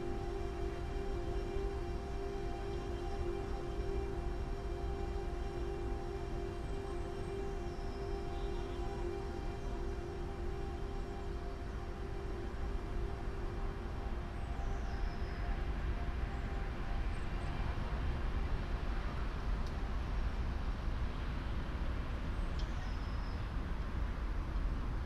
cologne, rheinseilbahn, klanginstallation 3klangreise
temporäre klanginstallation dreiklangreise
ort: koeln, rheinseilbahn
anlass: 50jähriges jubiläum der seilbahn
projekt im rahmen und auftrag der musiktriennale - koeln - fs - sound in public spaces
frühjahr 2007